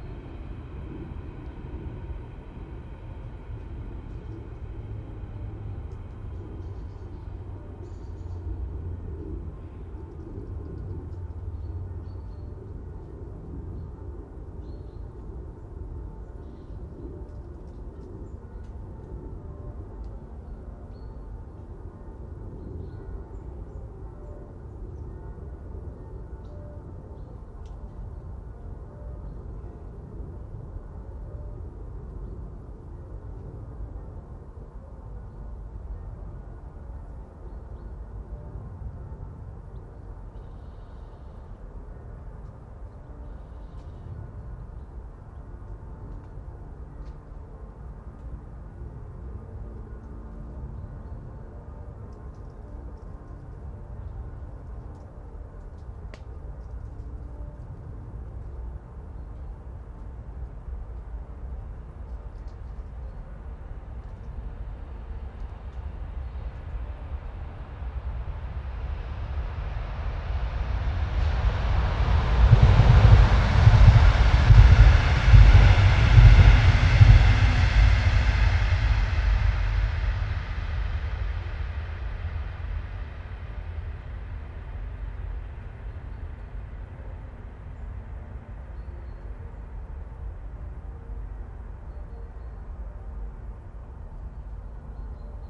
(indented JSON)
{
  "title": "Rain, trains, clangy bells, autumn robin, ravens, stream from the Schöneberger Südgelände nature reserve, Berlin, Germany - Distant Sunday bells, a train briefly sings, a plane and human voices",
  "date": "2021-11-28 09:25:00",
  "description": "Distant Sunday bells add to the background. But it is now a little busier on this beautiful morning. Trains still pass, a plane roars above and the first voices of human voices of the day are heard.",
  "latitude": "52.46",
  "longitude": "13.36",
  "altitude": "45",
  "timezone": "Europe/Berlin"
}